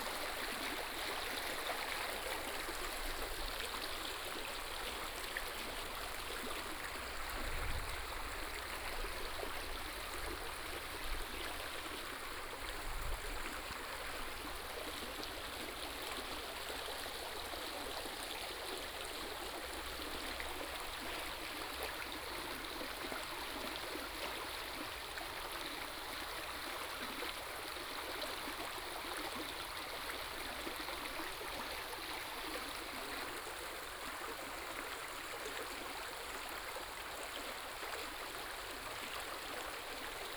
{"title": "中路坑溪, 埔里鎮桃米里 - The sound of streams", "date": "2016-04-21 10:14:00", "description": "The sound of water streams", "latitude": "23.94", "longitude": "120.92", "altitude": "490", "timezone": "Asia/Taipei"}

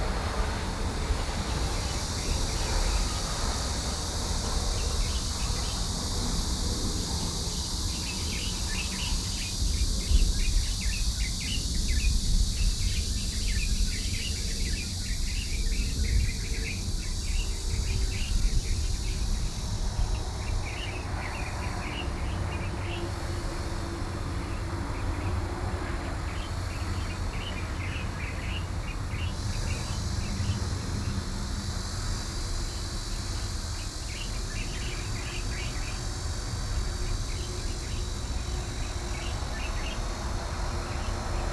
{"title": "八里, Bali Dist., 新北市 - Traffic Sound", "date": "2012-07-04 12:23:00", "description": "Traffic Sound, Cicadas cry, Bird calls\nSony PCM D50", "latitude": "25.13", "longitude": "121.36", "altitude": "36", "timezone": "Asia/Taipei"}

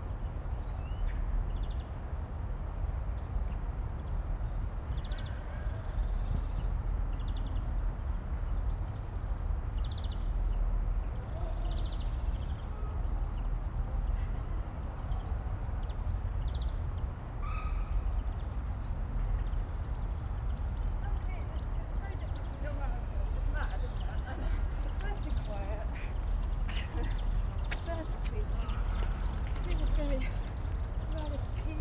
{"title": "small pier, Castle Mill Stream, Oxford, UK - morning ambience, train", "date": "2014-03-15 10:50:00", "description": "on a small pier at Castle Mill Stream, listening to passing-by trains and the ambience of that sunny morning in early spring.\n(Sony PCM D50, Primo EM172)", "latitude": "51.76", "longitude": "-1.27", "altitude": "61", "timezone": "Europe/London"}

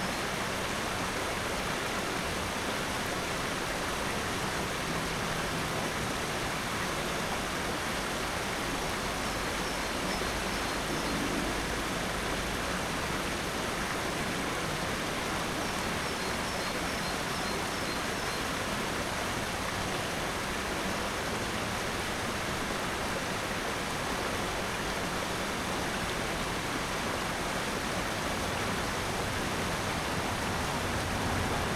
{"title": "wermelskirchen, talsperre: freibad remscheid, eschbach - the city, the country & me: remscheid lido, eschbach creek", "date": "2011-05-08 11:03:00", "description": "bridge over eschbach creek at remscheid lido nearby a weir\nthe city, the country & me: may 8, 2011", "latitude": "51.16", "longitude": "7.22", "altitude": "230", "timezone": "Europe/Berlin"}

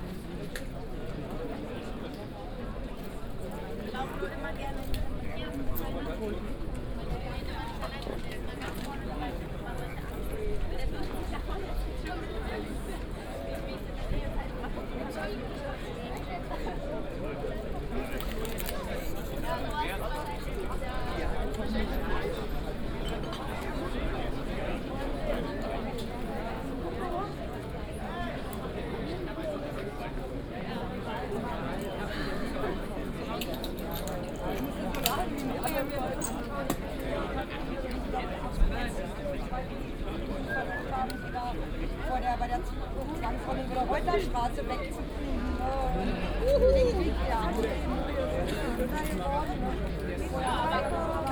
{"title": "kottbusser damm, schönleinstr. - demonstration, street blockade", "date": "2013-04-13 18:40:00", "description": "a demonstration against gentrification in this area blocked this usually very noisy street, almost no traffic audible. some tension between police and demonstrators is present.\n(Sony PCM D50, OKM2 binaural)", "latitude": "52.49", "longitude": "13.42", "altitude": "40", "timezone": "Europe/Berlin"}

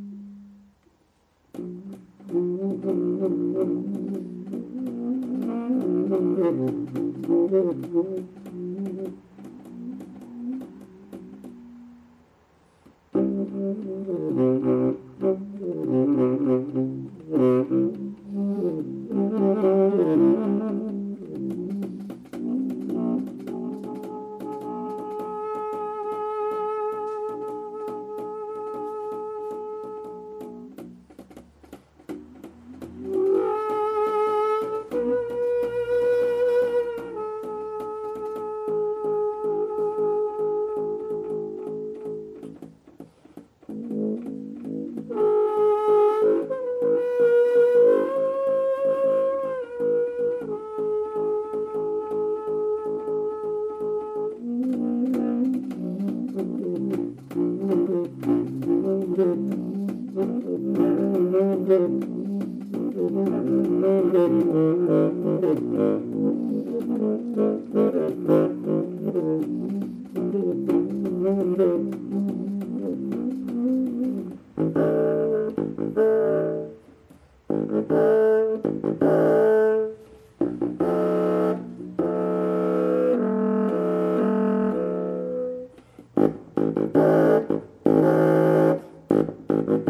{"title": "Dirk Raulf bass sax solo", "description": "open air performance during gallery opening", "latitude": "51.32", "longitude": "9.49", "altitude": "161", "timezone": "Europe/Berlin"}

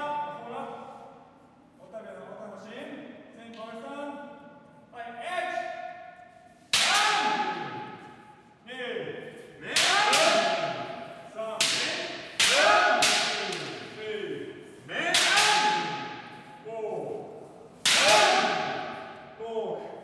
{"title": "Tel Aviv-Yafo, Israel - Kendo practice", "date": "2016-03-18 14:00:00", "description": "Kendo practice at Kusanone Kenyukai Kendo Israel (草ﾉ根剣友会) Tel Aviv", "latitude": "32.05", "longitude": "34.77", "altitude": "20", "timezone": "Asia/Jerusalem"}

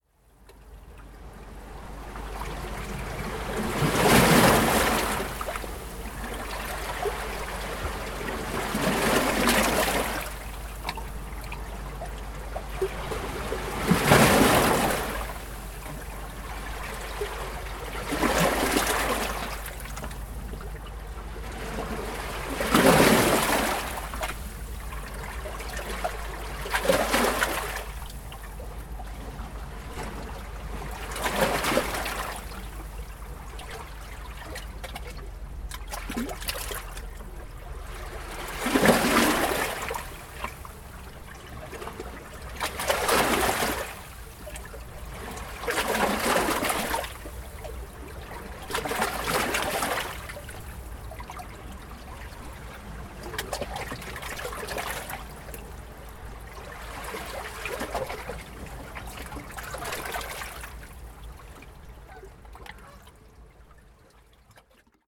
{"title": "Bluffers Park, Scarborough, ON, Canada - Waves of Lake Ontario 2", "date": "2019-08-15 15:11:00", "description": "Close-up of waves infiltrating spaces between rocks.", "latitude": "43.71", "longitude": "-79.23", "timezone": "GMT+1"}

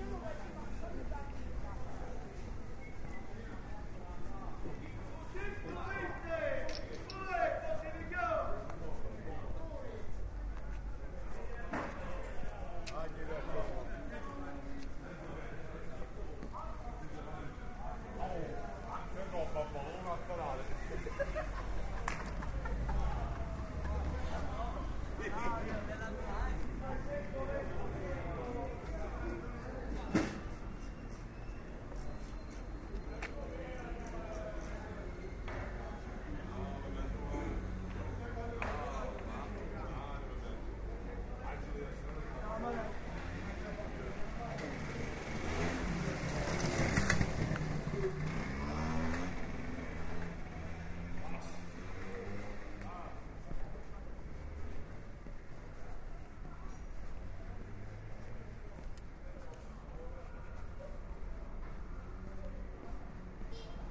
{"title": "La Vucciria, Via Pannieri, 90133 Palermo, Italia (latitude: 38.11728 longitude: 13.36375)", "description": "Mercato Vucciria, h11.00 22/01/2010 (romanlux)", "latitude": "38.12", "longitude": "13.36", "altitude": "20", "timezone": "Europe/Berlin"}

